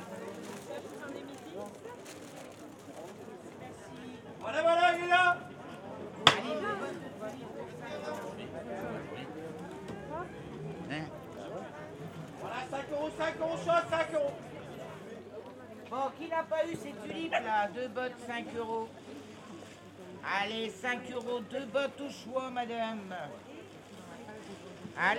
Pl. du Maréchal Foch, Saint-Omer, France - St-Omer - Marché du samedi
St-Omer
Marché du samedi matin - les marchands de fleurs (Tulipes...)